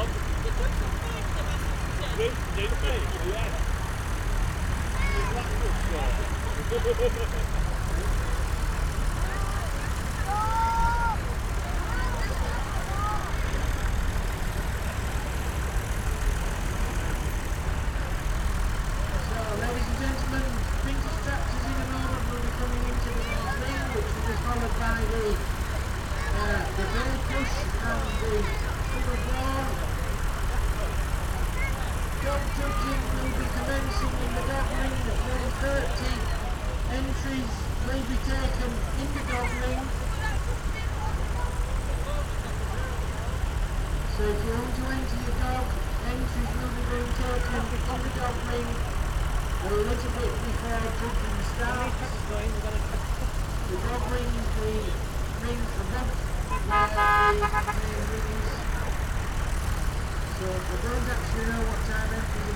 Red Way, York, UK - Farndale Show ... vintage tractor display ...
Farndale Show ... vintage tractor display ... lavalier mics clipped to baseball cap ... all sorts of everything ...